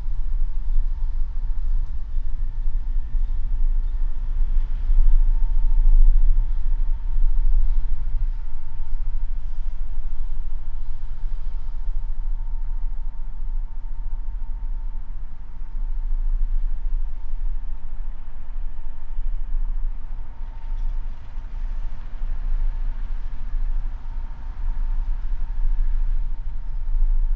Zarasai, Lithuania, atmosphere
Central place of provincial town. Two omnis and geophone cathcing lows...
Utenos apskritis, Lietuva, 29 February 2020, 14:50